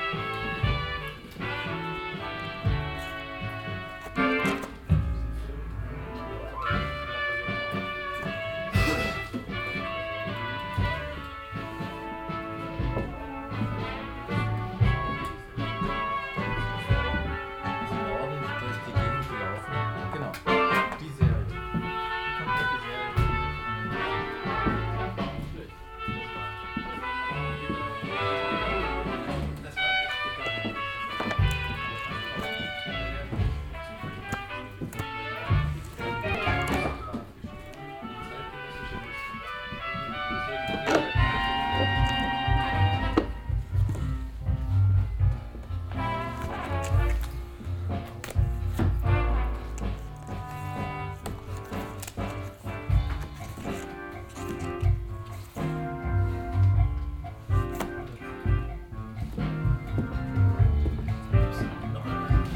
{
  "title": "cologne, kleiner griechenmarkt, a-musik",
  "date": "2008-04-28 09:39:00",
  "description": "aufnahme anlässlich des la paloma spezials\nim plattenladden der a-musik\nproject: social ambiences/ listen to the people - in & outdoor nearfield recordings",
  "latitude": "50.93",
  "longitude": "6.95",
  "altitude": "59",
  "timezone": "Europe/Berlin"
}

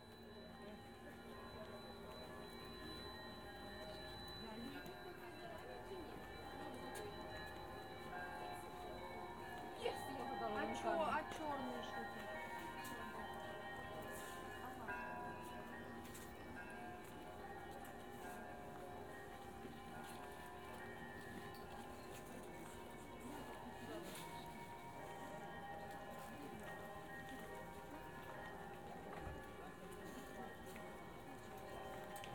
{
  "title": "Lavrska St, Kyiv, Ukraina - the sound of bells",
  "date": "2017-08-13 16:42:00",
  "description": "the sound of bells-binaural recording",
  "latitude": "50.44",
  "longitude": "30.56",
  "altitude": "193",
  "timezone": "Europe/Kiev"
}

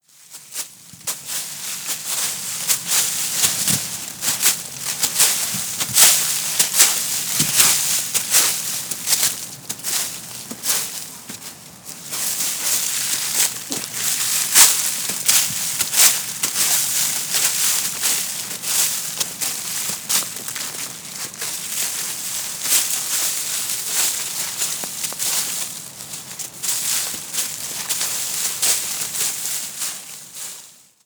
{
  "title": "berlin - grimm leaves",
  "date": "2010-11-03 14:56:00",
  "description": "grimmplatz, playground, leaves, spielplatz, blaetter, autumn, herbst",
  "latitude": "52.49",
  "longitude": "13.41",
  "altitude": "39",
  "timezone": "Europe/Berlin"
}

